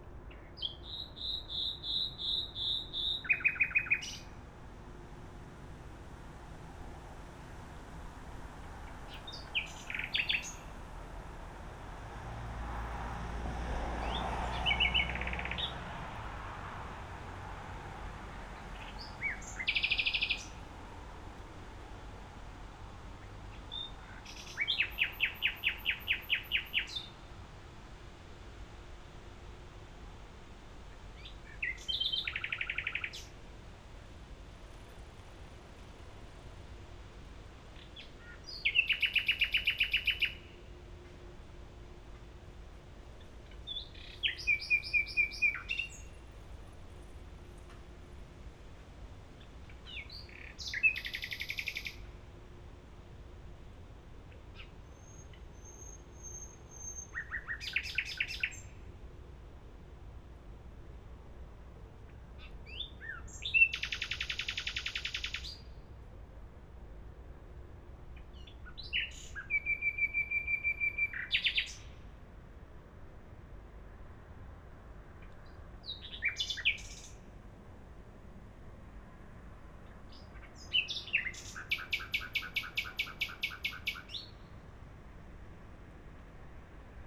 Kiefholzstraße / Mergenthalerring, Berlin - late Nightingale
on my way home I've heard this late Nightingale. The singers in June usually are lone males who couldn't manage to mate.
(SD702, AT BP4025)
Berlin, Germany, June 2019